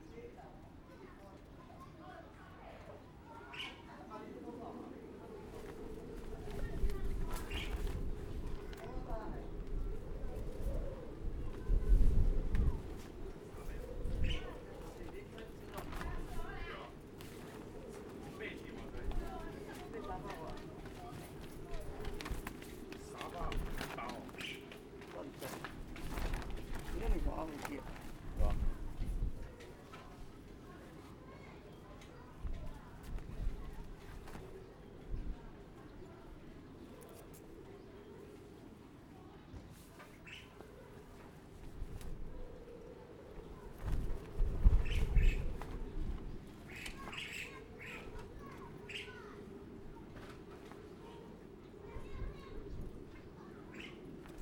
芳苑村, Fangyuan Township - the wind
The sound of the wind, On the streets of a small village
Zoom H6 MS
Changhua County, Taiwan, 9 March 2014, ~08:00